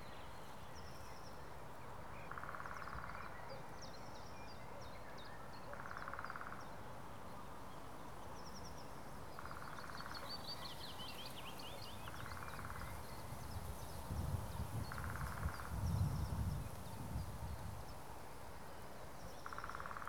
Suchy Las, forest clearing - forest clearing near railroad tracks
freight train passing. then deep forest ambience that somehow escaped being overwhelmed by urban sounds of the industrial district of Poznan.